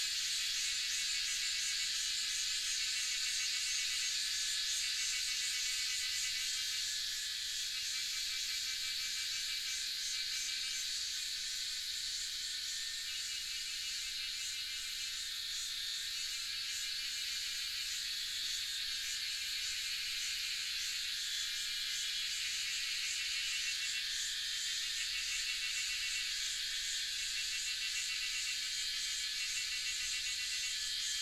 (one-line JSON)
{"title": "華龍巷, 南投縣魚池鄉 - Faced with the forest", "date": "2016-06-08 08:06:00", "description": "Faced with the forest, Cicada sounds", "latitude": "23.93", "longitude": "120.89", "altitude": "754", "timezone": "Asia/Taipei"}